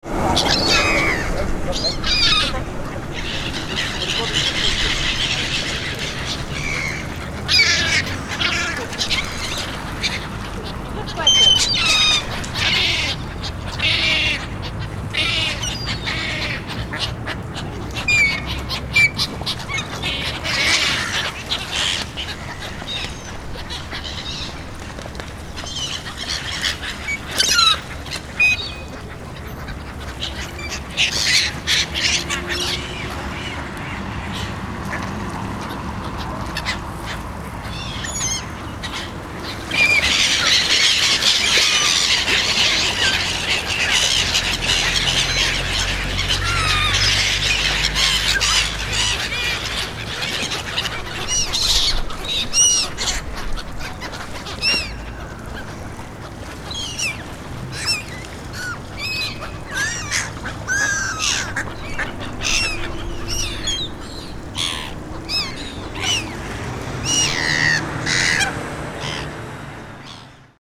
Rotterdam, Noordsingel - big birds eating breadcrumbs
seaguls, ducks and geese feasting on breadcrumbs, given by an old lady.
The Netherlands